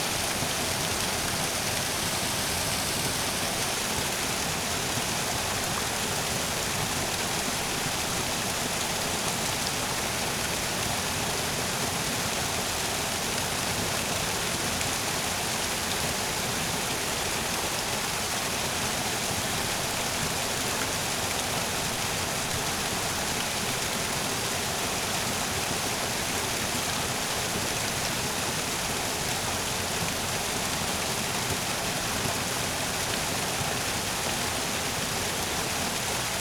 Lithuania, Utena, small waterfall at the swamp
the swamp is frozen, however this small waterfall is still alive